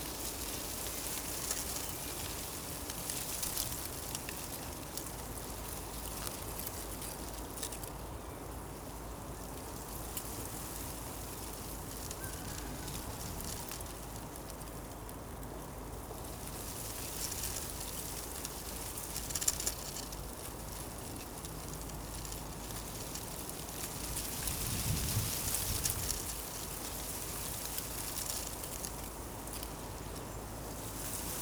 This is a sunny but windy day. Wind in the arbours, in this quiet district called Bruyères.
Ottignies-Louvain-la-Neuve, Belgium, 14 March 2016, 16:25